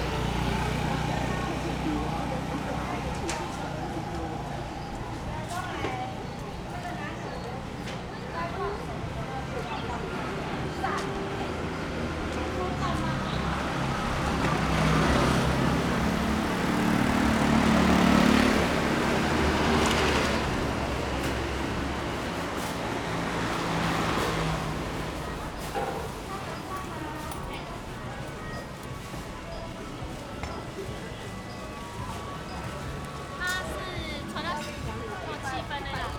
Walking in the traditional market
Rode NT4+Zoom H4n
15 March 2012, ~10:00